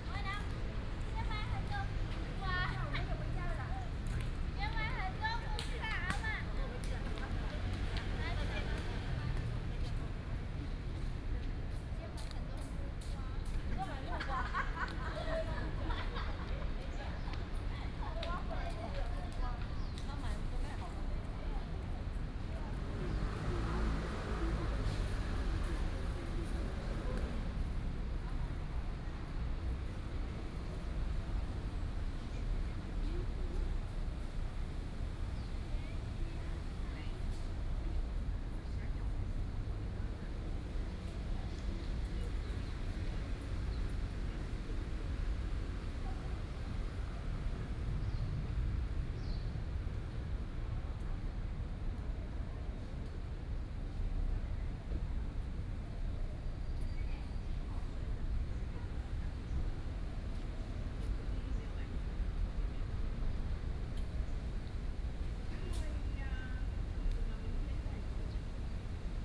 東豐公園, Da'an District, Taipei City - in the Park
Bird calls, Traffic noise, Very hot weather
Da’an District, Taipei City, Taiwan, 27 June 2015